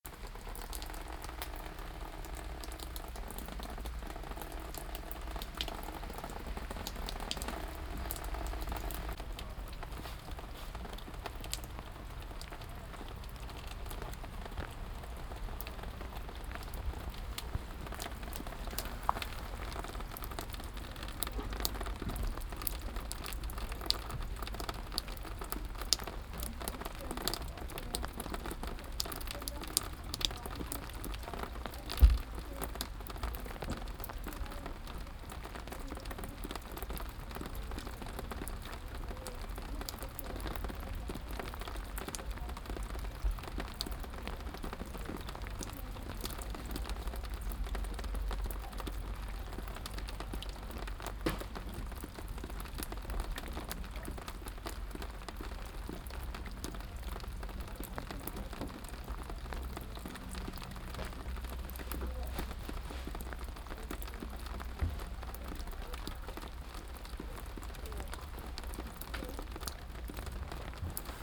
{"title": "berlin, schwarzer kanal, rain", "date": "2011-08-04 16:07:00", "description": "rain, binaural recording, behind the backstage waggon", "latitude": "52.48", "longitude": "13.46", "altitude": "34", "timezone": "Europe/Berlin"}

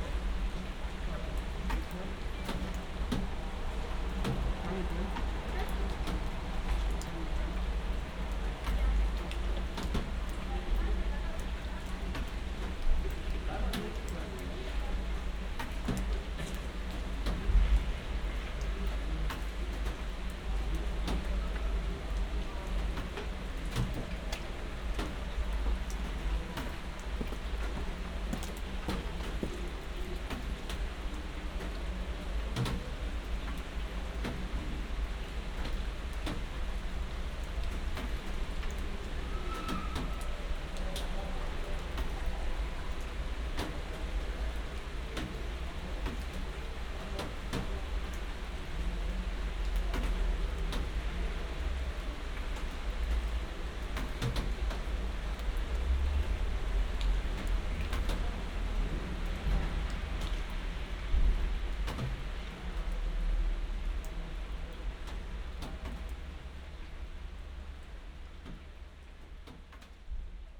{"title": "berlin, sanderstraße: vor restaurant - the city, the country & me: in front of a restaurant", "date": "2012-07-18 23:55:00", "description": "under porch of the restaurant\nthe city, the country & me: july 18, 2012\n99 facets of rain", "latitude": "52.49", "longitude": "13.43", "altitude": "45", "timezone": "Europe/Berlin"}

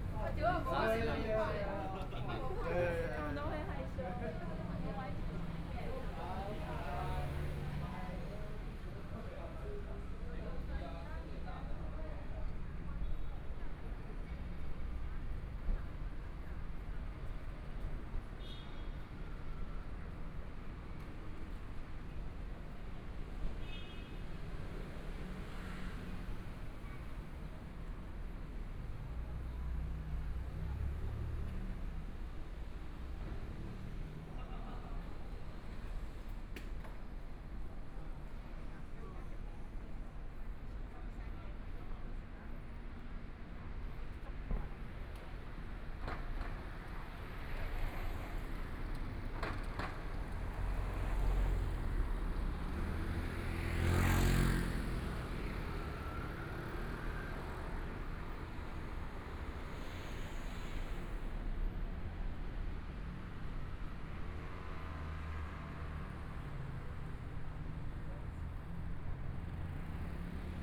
{"title": "Shuangcheng St., Taipei City - Walking across the different streets", "date": "2014-02-15 14:17:00", "description": "Walking across the different streets, Traffic Sound, Market, Binaural recordings, ( Proposal to turn up the volume ) ( Keep the volume slightly larger opening )Zoom H4n+ Soundman OKM II", "latitude": "25.07", "longitude": "121.52", "timezone": "Asia/Taipei"}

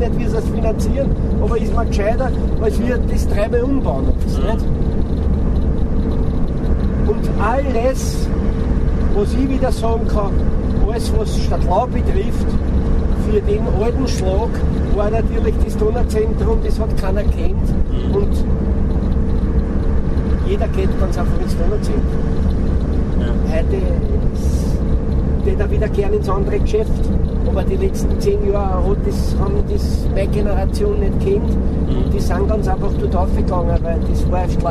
{"title": "A4 motorway, from bratislava to vienna", "date": "2010-04-02 18:35:00", "description": "going with a truck driver from bratislava to vienna, talking about the gradual decay of the viennese suburban neighbourhood of stadlau, where he is native", "latitude": "48.10", "longitude": "16.65", "altitude": "180", "timezone": "Europe/Vienna"}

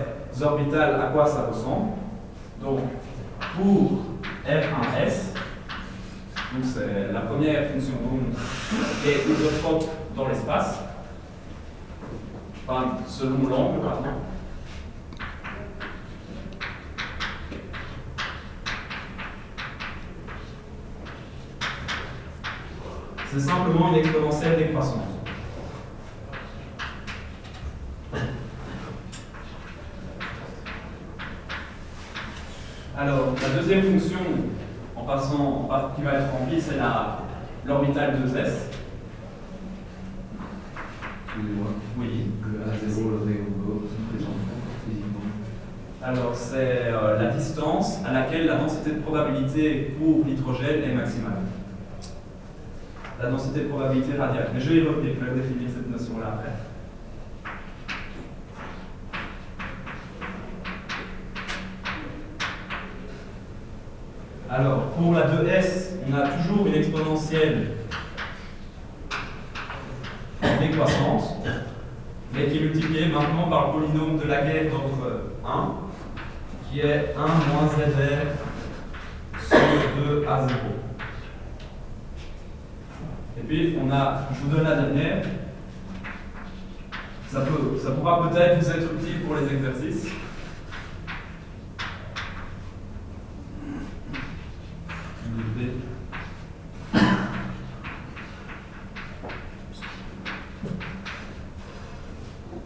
{"title": "Quartier du Biéreau, Ottignies-Louvain-la-Neuve, Belgique - A course of physical science", "date": "2016-03-11 14:35:00", "description": "A course of physical science. It looks like complicate and nobody's joking.", "latitude": "50.67", "longitude": "4.62", "altitude": "137", "timezone": "Europe/Brussels"}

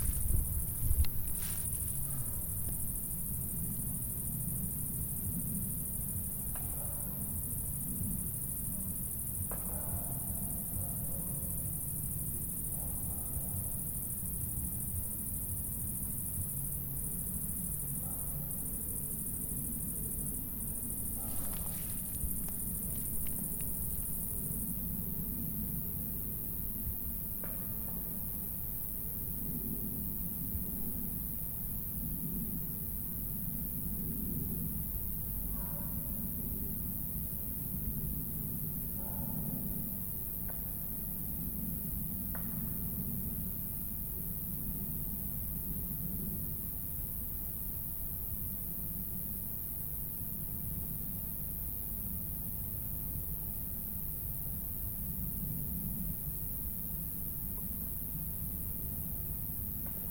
{"title": "Na Grebenu, Maribor, Slovenia - corners for one minute", "date": "2012-08-25 20:43:00", "description": "one minute for this corner: Na Grebenu 8", "latitude": "46.58", "longitude": "15.64", "altitude": "345", "timezone": "Europe/Ljubljana"}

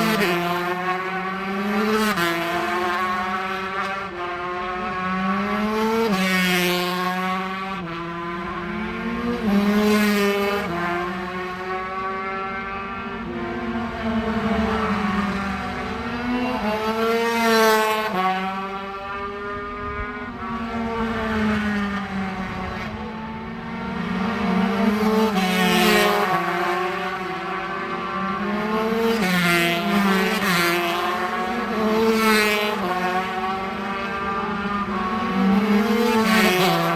{"title": "Leicester, UK - britsih superbikes 2002 ... 125 ...", "date": "2002-09-14 09:00:00", "description": "british superbikes 2002 ... 125 free practice ... mallory park ... one point stereo mic to mini disk ... date correct ... time not ...", "latitude": "52.60", "longitude": "-1.34", "altitude": "118", "timezone": "Europe/London"}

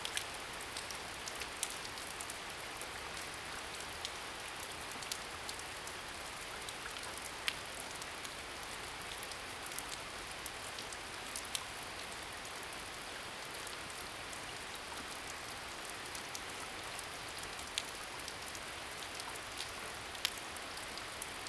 {"title": "種瓜路45-1, 埔里鎮桃米里 - Rain and bird sound", "date": "2016-09-14 05:39:00", "description": "early morning, Rain sound\nZoom H2n MS+XY", "latitude": "23.95", "longitude": "120.91", "altitude": "598", "timezone": "Asia/Taipei"}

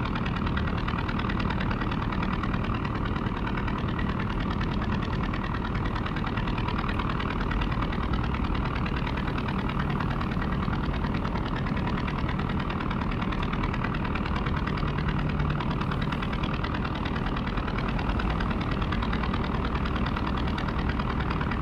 {"title": "馬公港, Penghu County - In the fishing port", "date": "2014-10-22 07:05:00", "description": "In the fishing port\nZoom H2n MS+XY", "latitude": "23.57", "longitude": "119.57", "altitude": "8", "timezone": "Asia/Taipei"}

